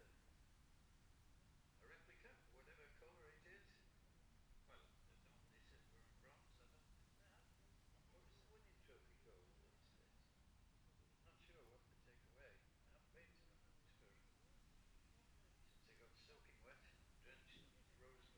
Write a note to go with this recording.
the steve henshaw gold cup 2022 ... lightweight and 650 twins qualifying ... dpa 4060s clipped to bag to zoom f6 ...